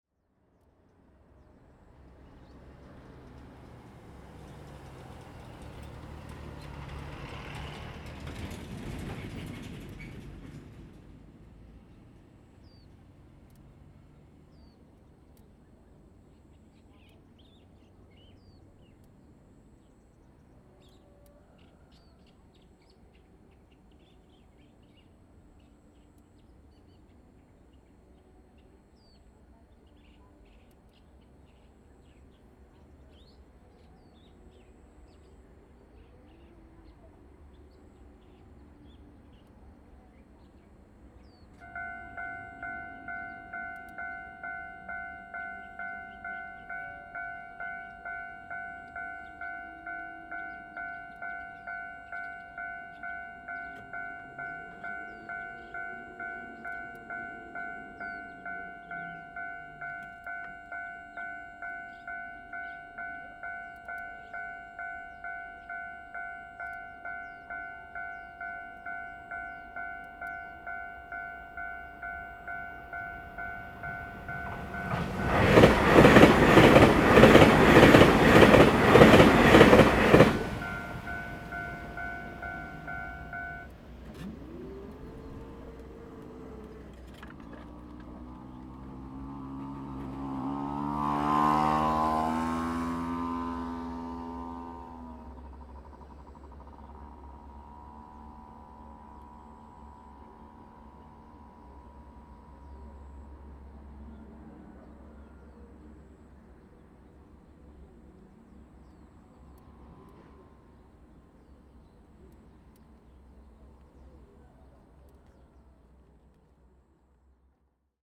At level crossing, Birds, Train traveling through, The weather is very hot
Zoom H2n MS+XY